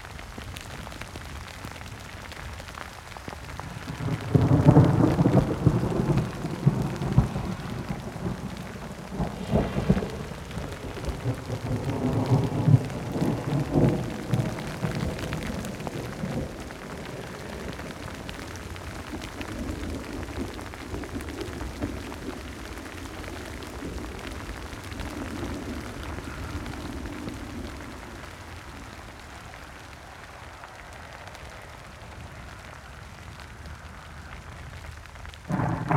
Illinois, USA - Thunderstorm and rain in a field in Illinois, USA
In a field in Illinois during a thunderstorm : thunderclap, thunder and rain, with light trafic in background.
2 May 2013